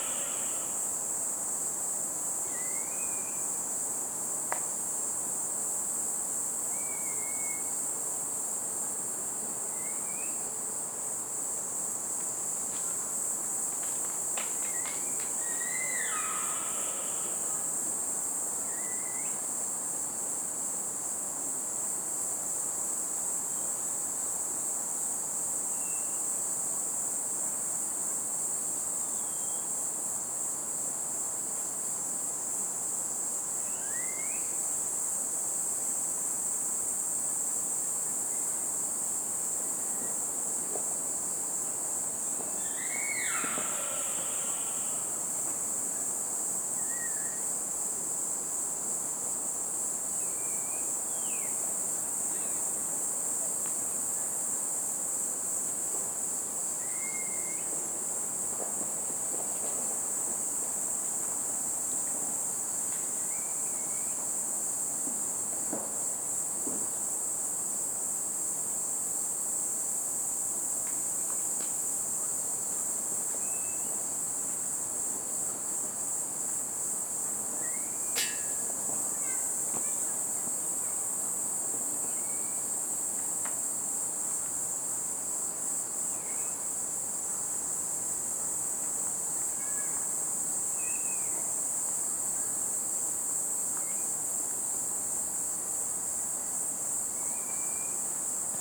대한민국 서울특별시 산34-6 우면동 - Umyun-dong, Echo Park, Water deer
Umyun-dong, Echo Park, Water Deer howling
우면동 생태공원, 고라니 울음
3 October 2019, 22:41